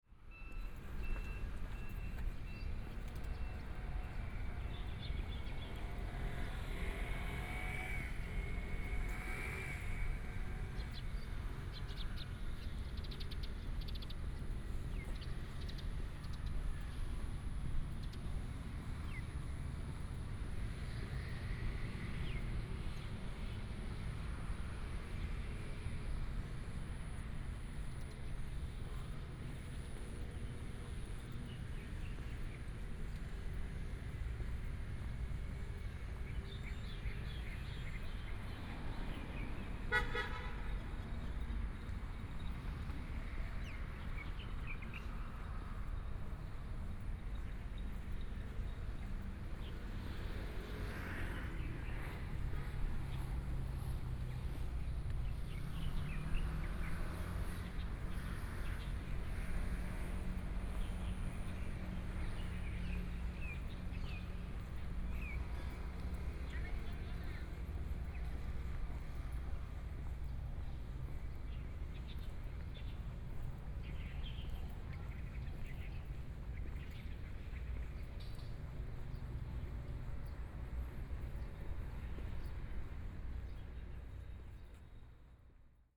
鹽埕區江南里, Kaoshiung City - walking in the Street
Birds singing, walking in the Street, traffic sound
14 May, ~07:00